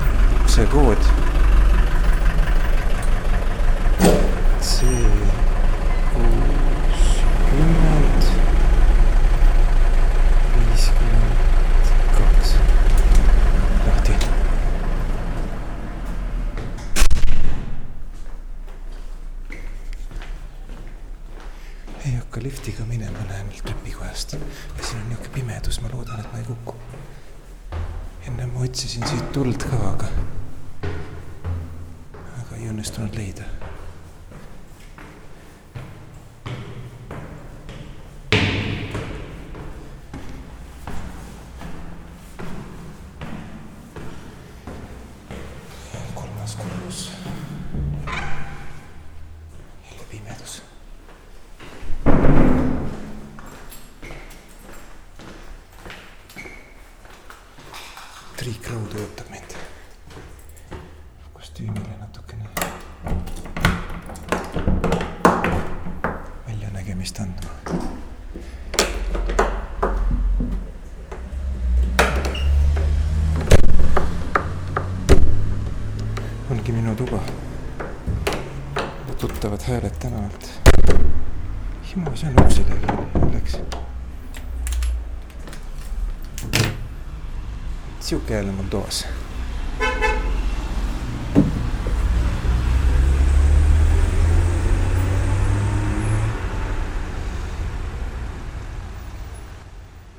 River, street, hotel - Before the Concert
Walking & talking. Text:
This riverside is so mysteriously awesome. I found another access. There's a bamboo field and some ancient trees bowing above the river which seems to be deep because I can't see the bottom, yet the water is clear. The fish in the river are so big, hardly measurable with arms-length! I can't believe seeing it in the open nature.... I've never seen anything like this in Estonia. I think these fish would weigh around 20 kilos. I see one now as I speak - floating in the water, upper fin next to the head reaching out of the water, sunbathing, like these elderly people up on the balcony there. The big trouts, big in the Estonian sense, you can see their flocks here... I'm going back to the street.
After having been hiking up and down and up and down in the mountains of Bad Urach, these little ups and downs of this Mediterranean city seem nearly insignificant. It's only about thirty miles to Saint Tropez, by the way.
Here it is, my hotel corner.